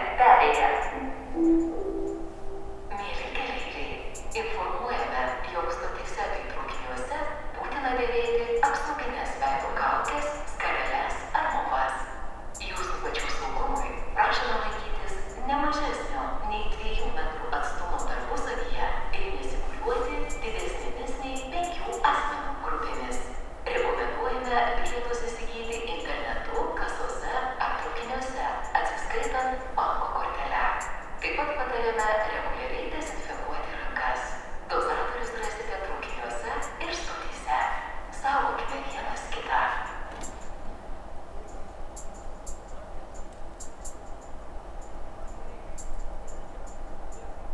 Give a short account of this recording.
Vilnius train station platform sounds; recorded with ZOOM H5.